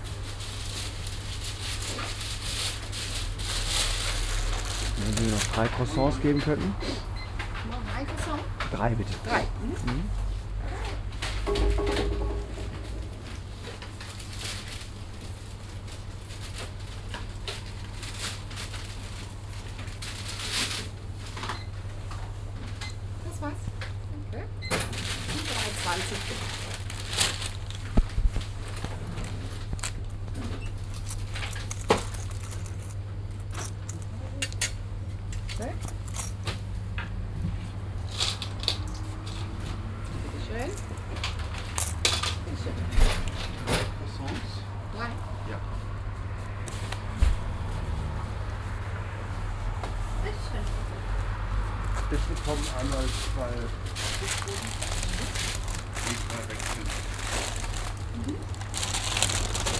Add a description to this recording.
morgens in der bäckerei, tütenrascheln, bestellungen, wechselgeld, soundmap nrw - social ambiences - sound in public spaces - in & outdoor nearfield recordings